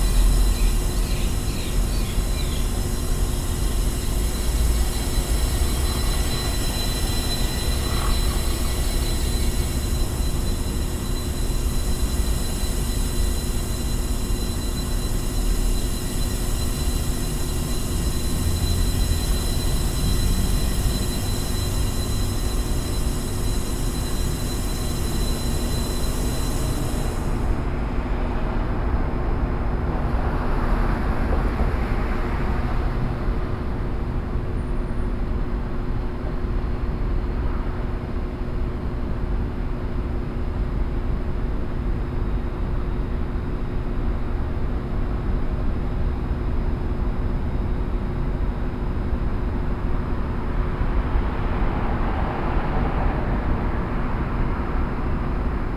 Austin, Brazos Street, Gas pipe
USA, Texas, Austin, Gas, Pipe, Binaural
TX, USA, 11 November 2011, ~19:00